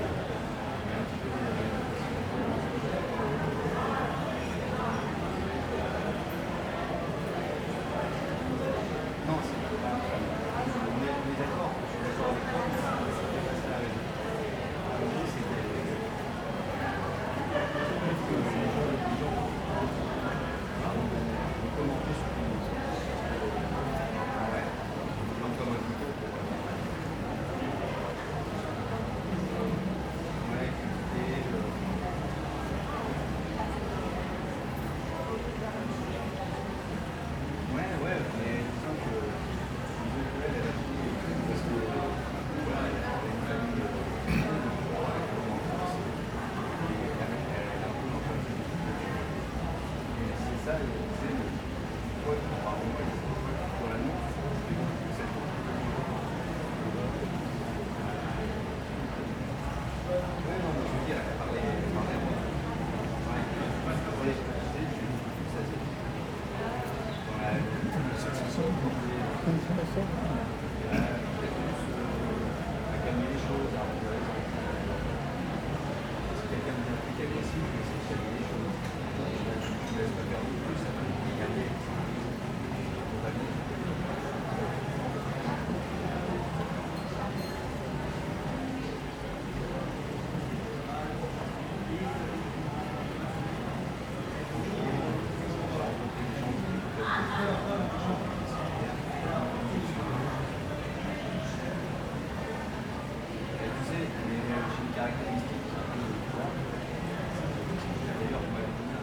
{"date": "2022-06-18 21:40:00", "description": "Evening on Paris' hotest day so far in 2022. Temperatures reached 40C much earlier in the year than usual.", "latitude": "48.85", "longitude": "2.35", "altitude": "60", "timezone": "Europe/Paris"}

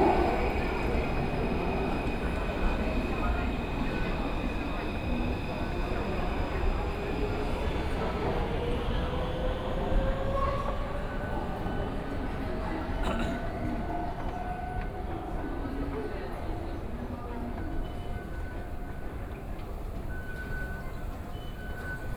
{"title": "Shandao Temple Station, Taipei - walking out of the Station", "date": "2013-10-31 17:31:00", "description": "From the start out of the station platform, Then on the road to the ground floor, Binaural recordings, Sony PCM D50 + Soundman OKM II", "latitude": "25.04", "longitude": "121.52", "altitude": "18", "timezone": "Asia/Taipei"}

